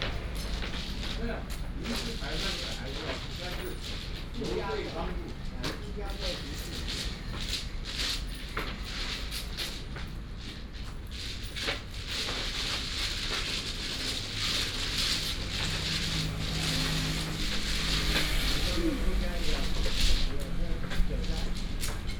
金城二路, East Dist., Hsinchu City - Mahjong
A group of people are playing mahjong, traffic sound, Binaural recordings, Sony PCM D100+ Soundman OKM II